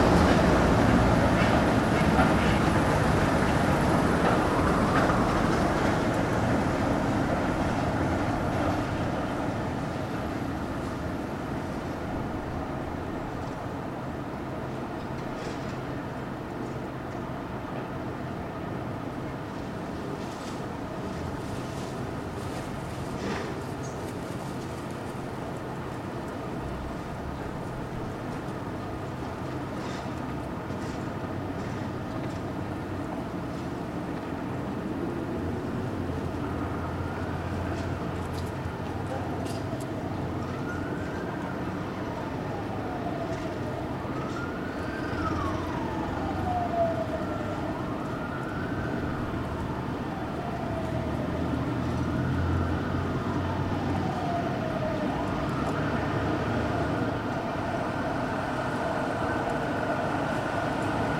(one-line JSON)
{"title": "Midday Bells - Earl Street South, Dublin", "date": "2011-07-18 12:00:00", "description": "Church Bells, Traffic, Seagulls, Sirens, Street, Wind.", "latitude": "53.34", "longitude": "-6.28", "altitude": "20", "timezone": "Europe/Dublin"}